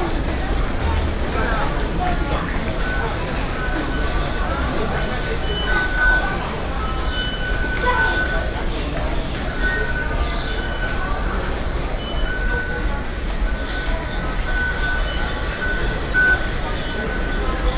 Taipei, Taiwan - Taipei Main Station